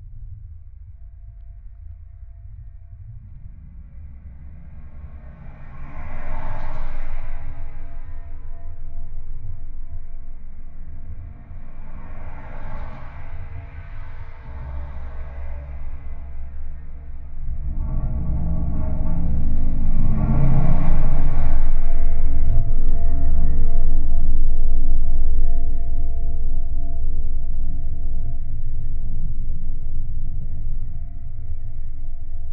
{"title": "Žalioji, Lithuania, mics on railings", "date": "2018-08-15 18:40:00", "description": "contact mics on the railings of bridge...cars passing by...", "latitude": "55.62", "longitude": "25.43", "altitude": "83", "timezone": "GMT+1"}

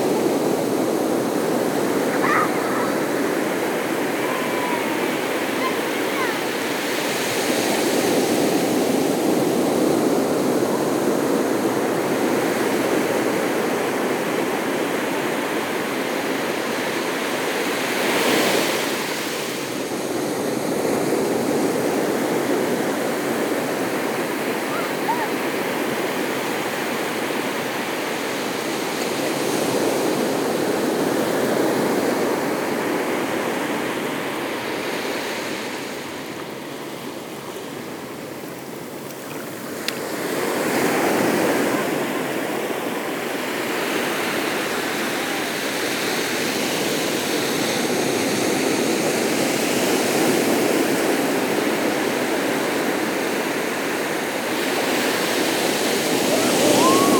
{"title": "Saint-Clément-des-Baleines, France - The sea", "date": "2018-05-21 18:00:00", "description": "Majestic sea on the marvellous 'conche des baleines' beach. It literally means the beach of the whales, because on the past a lot of whales run aground here.", "latitude": "46.25", "longitude": "-1.53", "timezone": "Europe/Paris"}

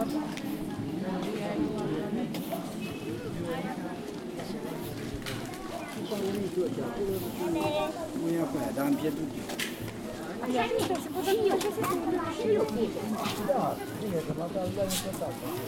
{"title": "Str. Principala, Com. Cerasu, Prahova, Cerașu, Romania - Sunday fair", "date": "2015-10-18 13:00:00", "description": "Walking through a Sunday fair. Recording made with a Zoom h2n.", "latitude": "45.32", "longitude": "26.04", "altitude": "543", "timezone": "Europe/Bucharest"}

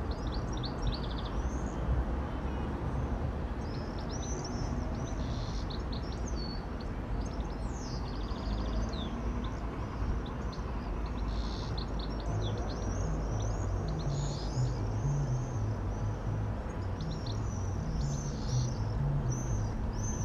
{"title": "Halesworth market town; sounds of summer through the attic skylight - Rural town archetypical wildlife/human sound mix", "date": "2021-07-20 14:01:00", "description": "From the attic skylight, swifts close by, work in the garden with radio playing, a goldfinch on the roof, traffic, chugging machine in the distant, a neighbour's canary sings from a cage.", "latitude": "52.35", "longitude": "1.50", "altitude": "16", "timezone": "Europe/London"}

